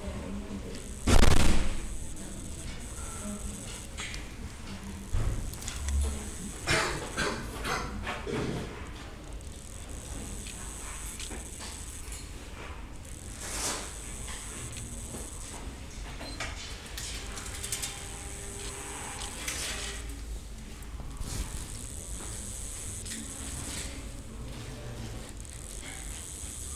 Germanys universities bureaucracy
Berlin, Germany